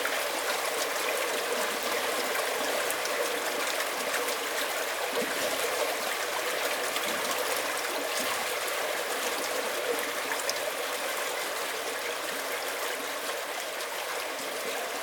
Bastrop County, TX, USA - Alum Creek
Recorded inside a concrete pipe under Park Rd 1C (between Bastrop and Buescher State Parks) with Alum Creek passing underway. Equipment: Marantz PMD661 & a stereo pair of DPA 4060's
29 June 2015, 17:00